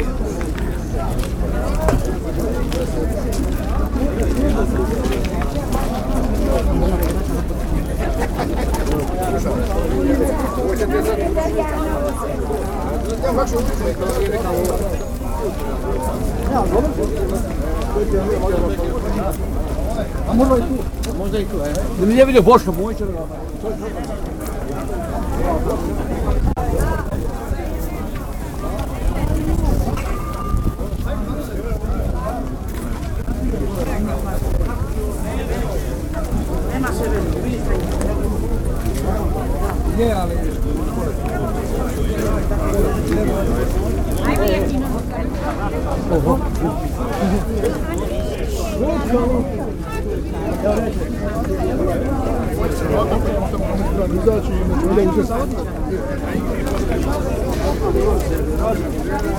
Benkovac, Benkovački sajam, Kroatien - Walk over the fair
Benkovacki sajam is an open air trade fair close to Benkovac. It takes place on the 10th of every month and is said to be the biggest in Dalmatia with thousends of visitors. You can buy vegetables and fruits, car tires, furniture, tools, pottery, homemade products, clothes, chicken, pigs, dogs, cattle ... A great possibility for the people to share news and meet each other.
Croatia